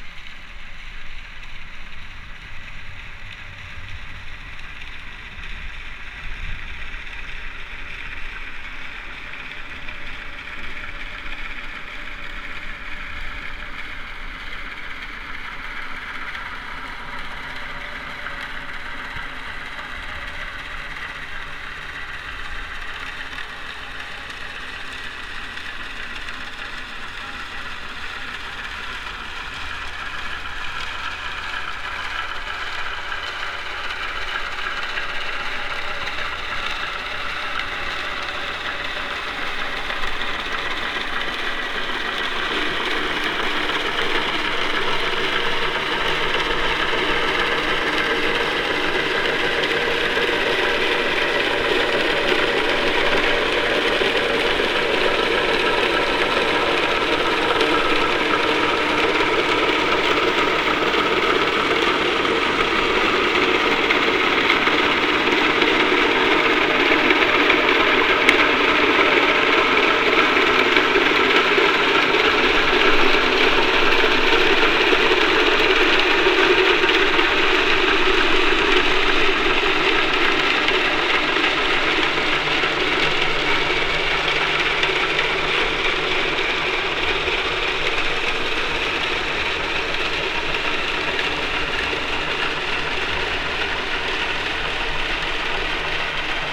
Braunschweiger Hafen, Mittellandkanal, Deutschland - Hafen Mittellandkanal
Braunschweiger Hafen, Mittellandkanal, Hydrophone, Lastschiff fährt vorbei.
Projekt: TiG - Theater im Glashaus: "über Land und Mehr - Berichte von einer Expedition zu den Grenzen des Bekannten". TiG - Theater im Glashaus macht sich 2013 auf zu Expeditionen in die Stadt, um das Fremde im Bekannten und das Bekannte im Fremden zu entdecken. TiG, seit 2001 Theater der Lebenshilfe Braunschweig, ist eine Gruppe von Künstlerinnen und Künstlern mit unterschiedlichen Kompetenzen, die professionell erarbeitete Theaterstücke, Performances, Musik und Videofilme entwickelt.
Niedersachsen, Deutschland, 15 April 2013